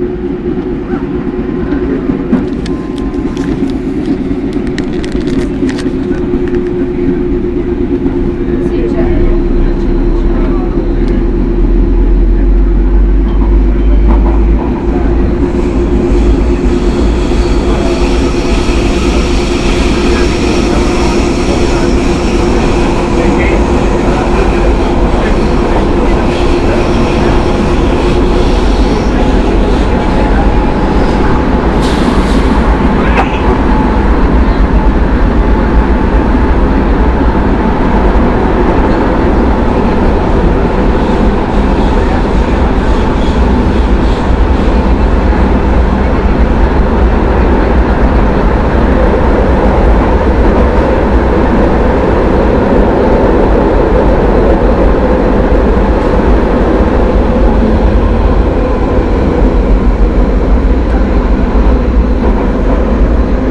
Rome, subway, line B, station Piramide. Travel from Piramide to Circo Massimo
11 August 2010, 08:00